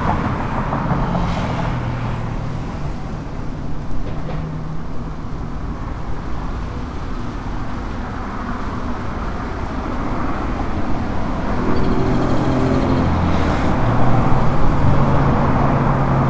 Traffic on Las Torres avenue during COVID-19 in phase 2 in León, Guanajuato. Mexico. In front of the Plaza Mayor shopping center.
This is a busy avenue. Although in this quarantine the difference in vehicular flow on this road is very noticeable.
(I stopped to record this while I was going to buy my mouth covers.)
I made this recording on April 14th, 2020, at 5:35 p.m.
I used a Tascam DR-05X with its built-in microphones and a Tascam WS-11 windshield.
Original Recording:
Type: Stereo
Esta es una avenida con mucho tráfico. Aunque en esta cuarentena sí se nota mucho la diferencia de flujo vehicular en esta vía.
(Me detuve a grabar esto mientras iba a comprar mis cubrebocas.)
Esta grabación la hice el 14 de abril 2020 a las 17:35 horas.
Guanajuato, México, 14 April